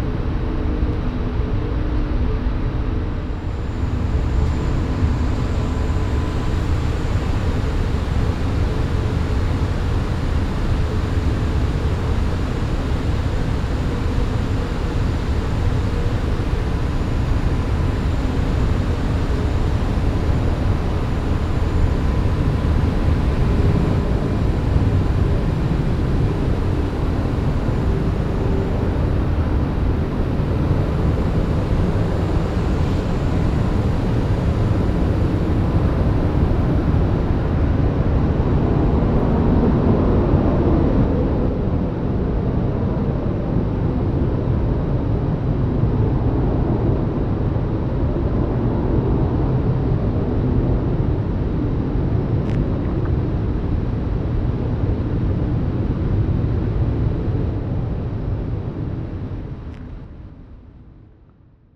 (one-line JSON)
{"title": "ratingen west - tennishalle", "description": "lüfung und schallresonanzen ein einer aufblasbaren tennishalle\nsoundmap nrw:\nsocial ambiences/ listen to the people - in & outdoor nearfield recordings", "latitude": "51.29", "longitude": "6.81", "altitude": "41", "timezone": "GMT+1"}